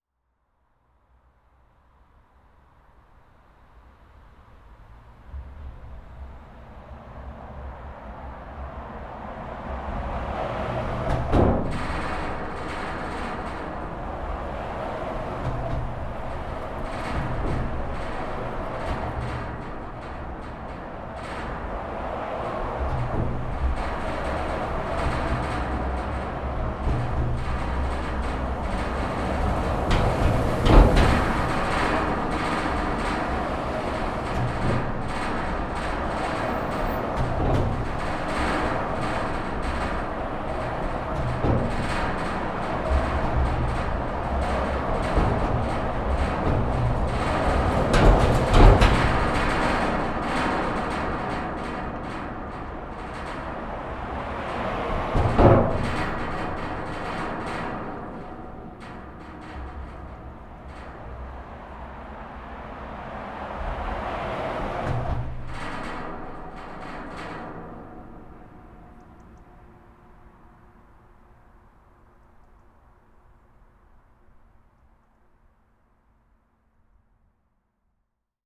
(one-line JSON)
{"title": "Pl. de la Gare, Précy-sur-Oise, France - Pont de Précy 2", "date": "2022-01-07 17:38:00", "description": "Passage of cars on the bridge, recorded from under the bridge with Roland R-07+CS-10EM.", "latitude": "49.20", "longitude": "2.38", "altitude": "26", "timezone": "Europe/Paris"}